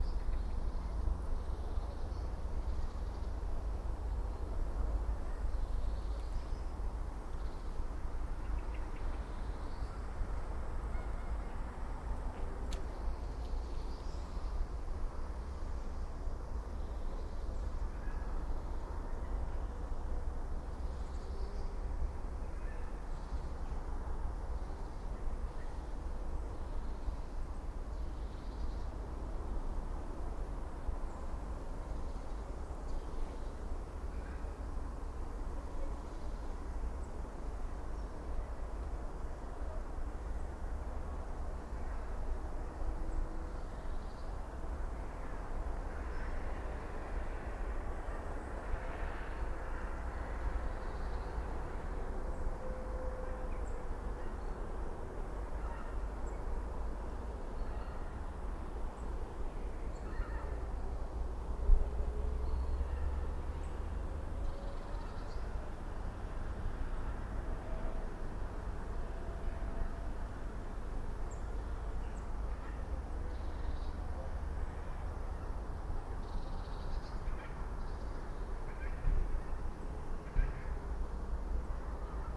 Kasteelheuvel, Bronkhorst, Netherlands - Kasteelheuvel, Bronkhorst
Birds, road and river traffic in distance.
Soundfield Microphone, Stereo decode.